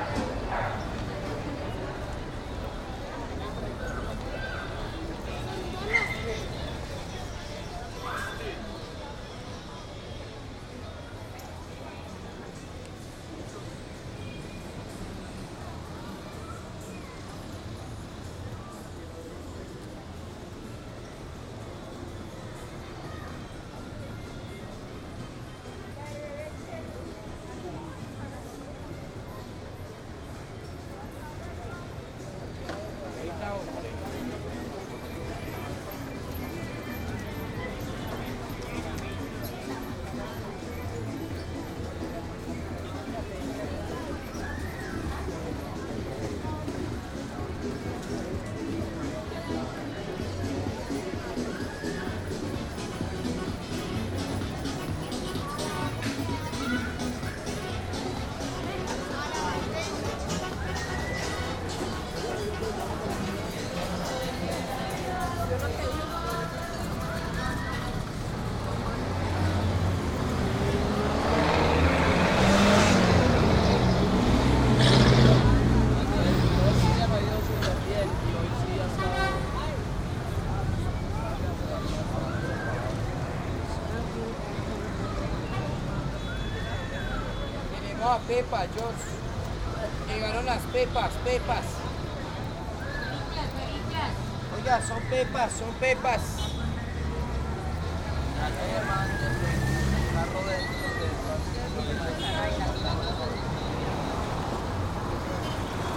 Ibagué, Ibagué, Tolima, Colombia - Ibagué deriva sonora01
Ejercicio de deriva sonora por el centro de Ibagué.
Punto de partida: Hotel Ambalá
Soundwalk excercise throughout Ibagué's dowtown.
Equipment:
Zoom h2n stereo mics Primo 172.
Technique: XY